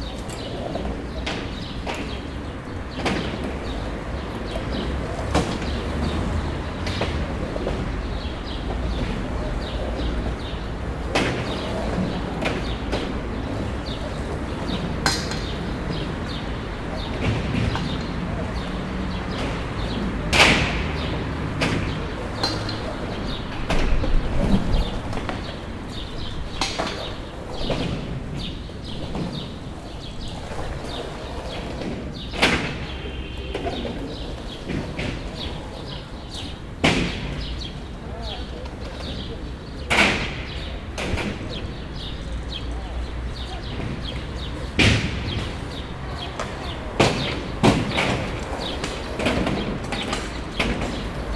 Skatepark Rozelor, Cluj-Napoca, Romania - (-195) Skatepark Rozelor, Cluj-Napoca
Skatepark Rozelor with some birds in the background.
recorded (probably) with Zoom H2n
sound posted by Katarzyna Trzeciak